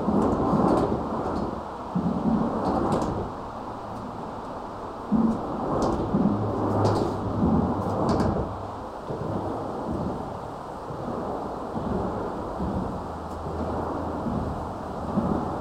Catonsville, MD, USA - Traffic heard from under bridge
The muffled sounds of cars passing only a few feet above, recorded with a Tascam DR-40.
Ellicott City, MD, USA, 6 November